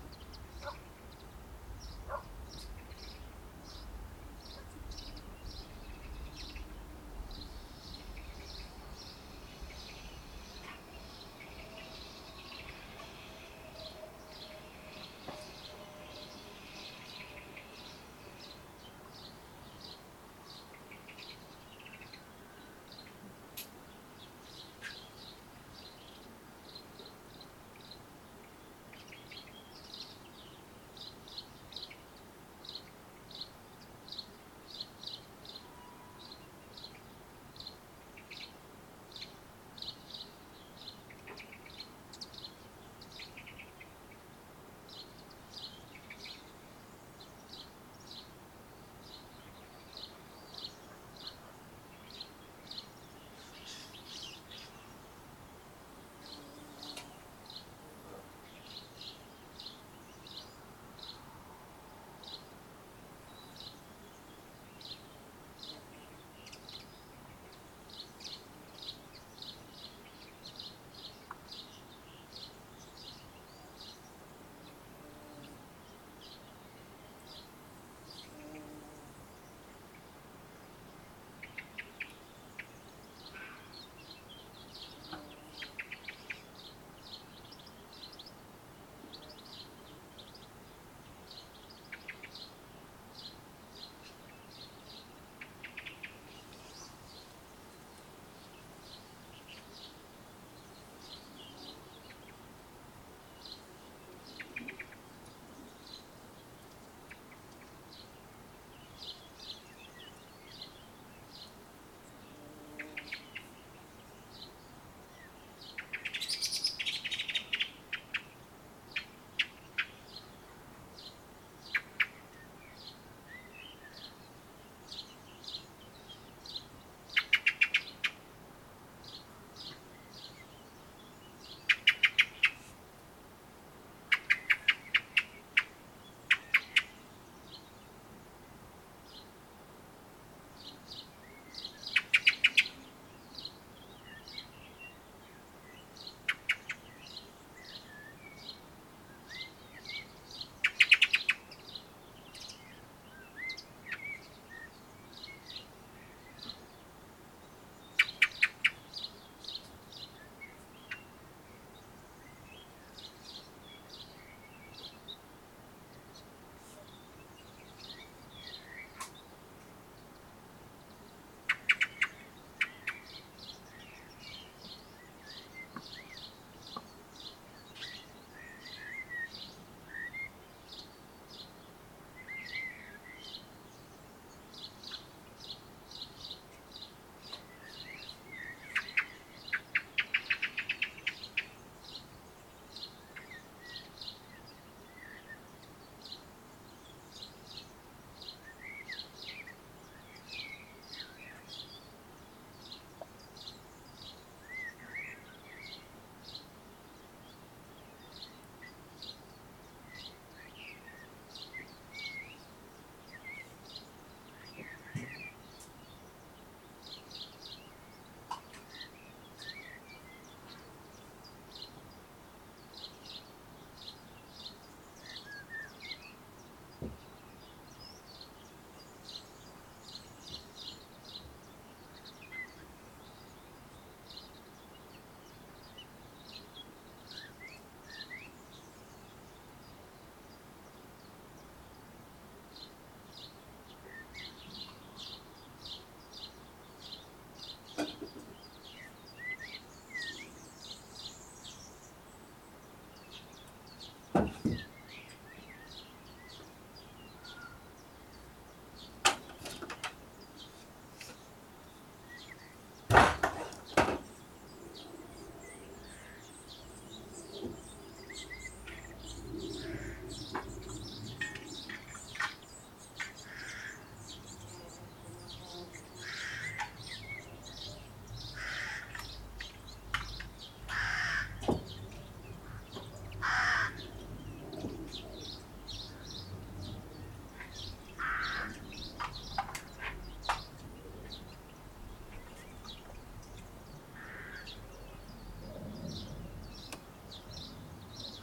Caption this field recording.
Morning in the allotment garden, Recorded with Olympus L11